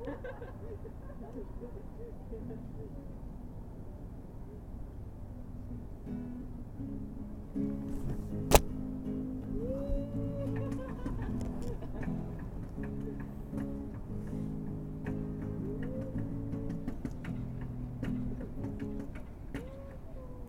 Young people are sitting on benches in a park in Yakutsk, the capital of the Sakha republic. The benches are around the Taloye lake, where there are lot of mosquitoes in the evening. The evening is hot and full of smoke. The young people are laughing, playing the guitar and talking. Talks by other visitors of the park can also be heard.
These people may sound so careless if you take into account the current war situation. However, many young people over there seem to be anti-militaritstic, having to somehow live far away from Moscow and still be engaged in the political proceses.